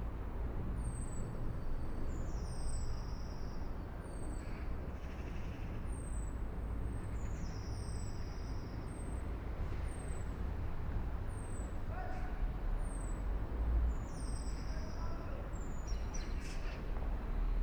Vestaweg, Binckhorst, Den Haag The Netherlands - Vestaweg
Housing area between busy Binckhorstlaan and cemetery. Voice, birds. Soundfield Mic (Blumlein decode from Bformat) Binckhorst Mapping Project
Laak, The Netherlands, 28 February 2012